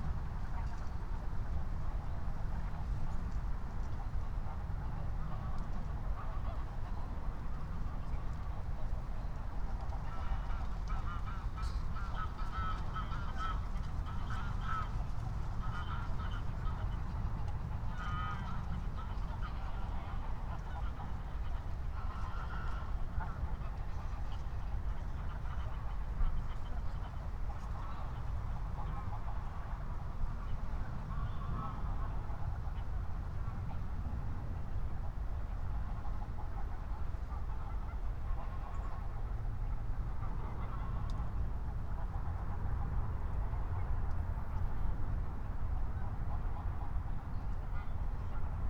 21:03 Berlin, Buch, Moorlinse - pond, wetland ambience
Deutschland